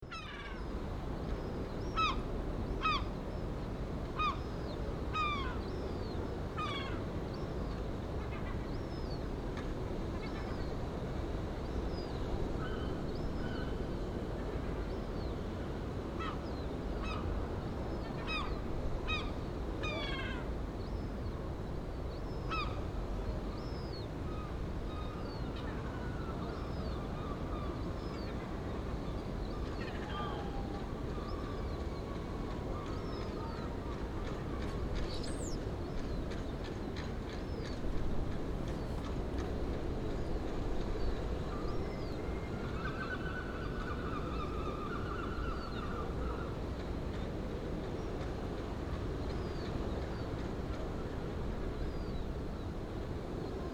Seagulls at West Bay
hear waves in distance and someone repairing their boat. WLD 2011
Bridport, Dorset, UK